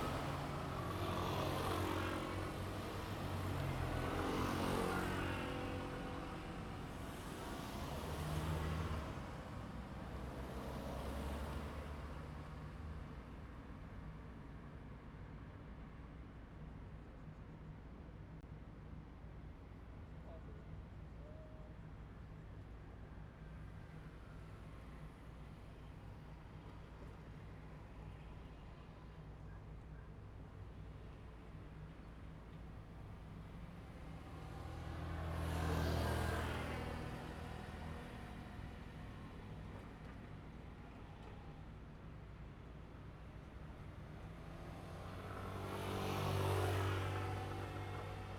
中華路一段, Zhongli Dist., Taoyuan City - Railroad Crossing
The train runs through, traffic sound
Zoom h2n MS+XY
Taoyuan City, Taiwan, 18 August